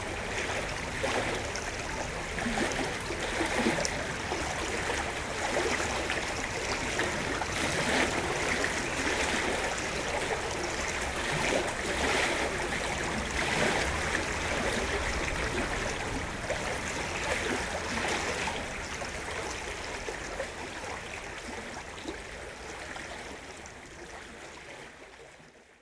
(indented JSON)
{
  "title": "Troyes, France - Tributary stream",
  "date": "2017-08-03 09:35:00",
  "description": "In Troyes, there's a lot of tributary streams, affluents and canals, nourishing the Seine river. This is here one of the alive stream, joining the Seine river : the Trevois canal.",
  "latitude": "48.30",
  "longitude": "4.08",
  "altitude": "103",
  "timezone": "Europe/Paris"
}